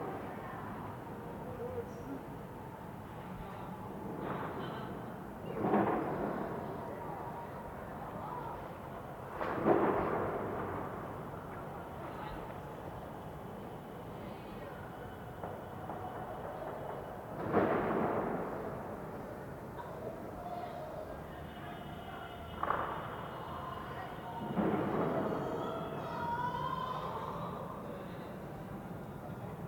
{"title": "Moabit, Berlin, Germany - Penalty Shootout screams, Champions League final, Bayern Munich v Chelsea", "date": "2012-05-19 22:34:00", "description": "Fans caught up in the atmosphere of the match while watching it on TV a couple of buildings distant. Obviously Bayern supporters, it all goes wrong when Chelsea win (maybe around 4'20\" in). Even the soundscape sounds disappointed. Some of the longer gaps between events have been edited, so it's not quite real time.", "latitude": "52.53", "longitude": "13.33", "altitude": "39", "timezone": "Europe/Berlin"}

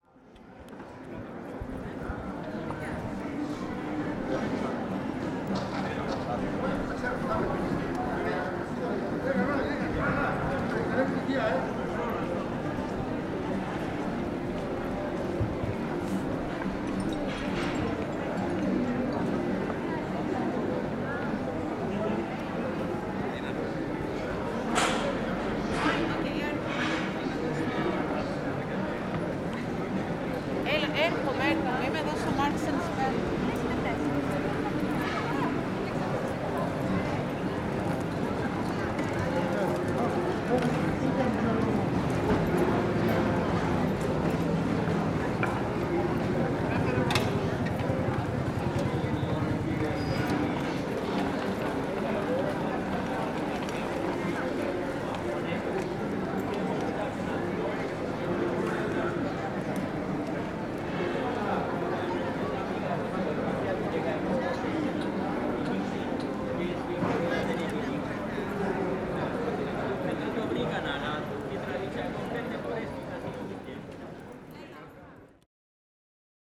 {"title": "Evgeniou Voulgareos, Corfu, Greece - Skaramaga Square - Πλατεία Σκαραμαγκά (Πλατεία Γεωργάκη)", "date": "2019-03-26 13:00:00", "description": "People passing by. A street musician plays the guitar and sings.", "latitude": "39.62", "longitude": "19.92", "altitude": "13", "timezone": "Europe/Athens"}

